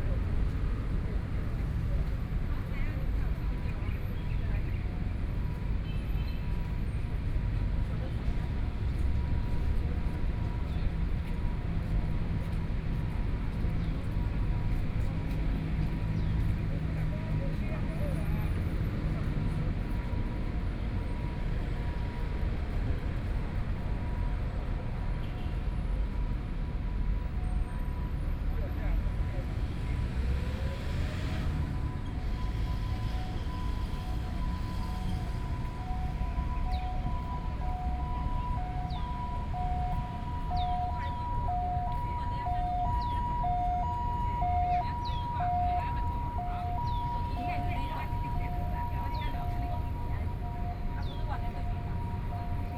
Chiang Kai-Shek Memorial Hall Station - Road corner
Road corner, Traffic Sound, Birds
Sony PCM D50+ Soundman OKM II